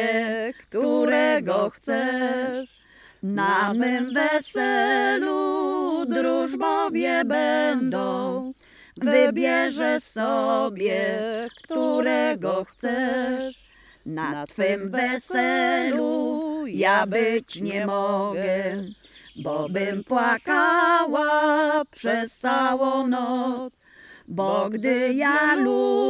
{"title": "Loryniec - Piosenka O jak mi teskno", "date": "2014-07-20 12:26:00", "description": "Piosenka nagrana w ramach projektu : \"Dźwiękohistorie. Badania nad pamięcią dźwiękową Kaszubów\".", "latitude": "54.05", "longitude": "17.89", "altitude": "138", "timezone": "Europe/Warsaw"}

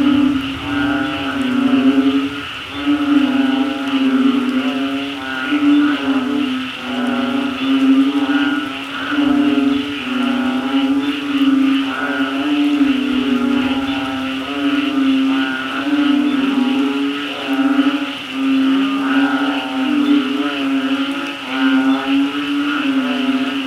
{"title": "Ban Na Tin (Krabi Province) - Toads and Frogs singing during the night", "date": "2018-10-15 20:00:00", "description": "During the night in the small village of Ban Na Tin (Krabi Province), after the rain, toads and frogs are happy and singing.\nRecorded by an ORTF Setup Schoeps CCM4x2 in a Cinela Windscreen\nRecorder Sound Devices 633\nGPS: 8.0651833, 98.8099667\nSound Ref: TH-181015T03", "latitude": "8.07", "longitude": "98.81", "altitude": "30", "timezone": "Asia/Bangkok"}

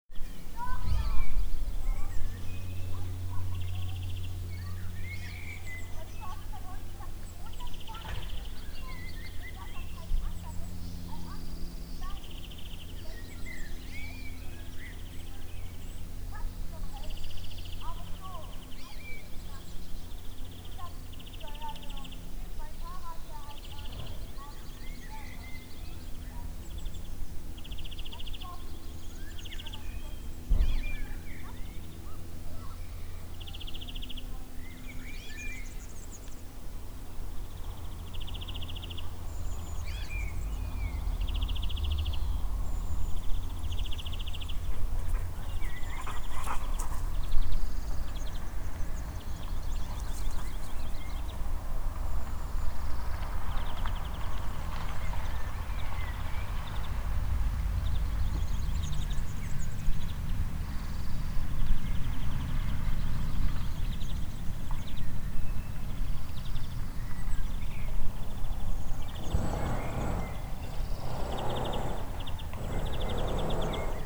{"date": "2010-08-30 12:00:00", "description": "Pssst! (Hirschroda bei Tag und Nacht)", "latitude": "51.21", "longitude": "11.69", "altitude": "201", "timezone": "Europe/Berlin"}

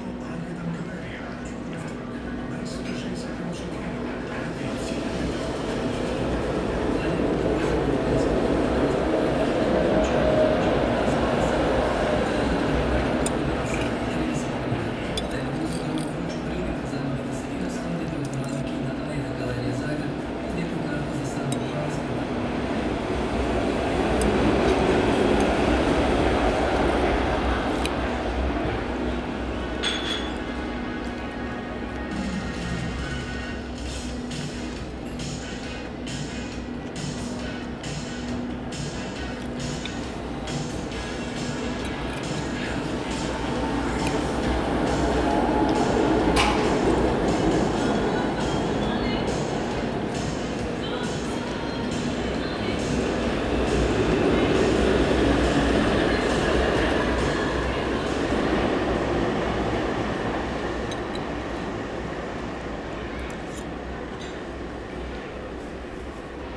{"title": "Restaurant Fulir, disappeared in transition, Zagreb, Croatia - sounds from 10 years ago", "date": "2001-07-14 16:00:00", "description": "sounds of Ilica street with trams passing by recorded from the inside of a restaurant in quiet hours ( the identity and design of the restaurant were dedicated to one of the best Croatian films); exists no more", "latitude": "45.81", "longitude": "15.97", "altitude": "130", "timezone": "Europe/Zagreb"}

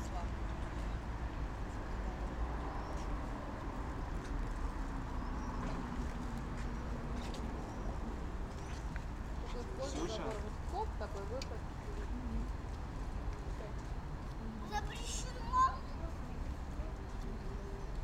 {"title": "Kaliningrad, Russia, standing at submarine", "date": "2019-06-08 19:25:00", "description": "Museum of Oceans, standing at russian submarine", "latitude": "54.71", "longitude": "20.49", "timezone": "Europe/Kaliningrad"}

